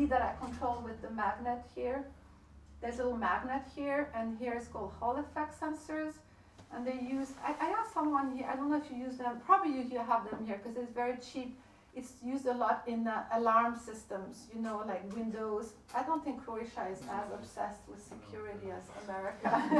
Peek&Poke, Rijeka, Laetitia Sonami - Ladys Glow
Rijeka, Croatia, 8 May 2011